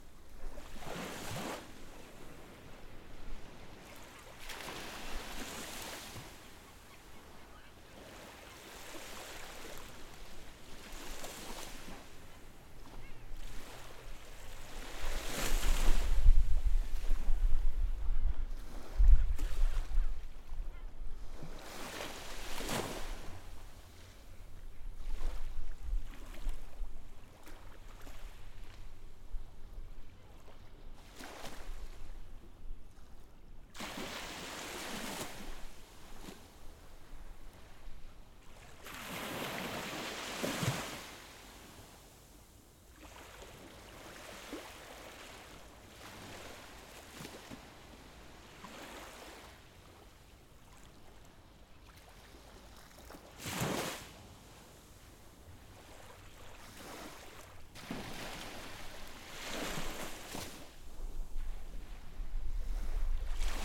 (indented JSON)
{
  "title": "bul. \"Primorski\", Primorski, Varna, Bulgaria - Central Beach Varna",
  "date": "2021-12-20 09:25:00",
  "description": "Waves, seagulls and some wind on a sunny winter morning at the central beach of Varna. Recorded with a Zoom H6 using the X/Y microphone.",
  "latitude": "43.20",
  "longitude": "27.92",
  "timezone": "Europe/Sofia"
}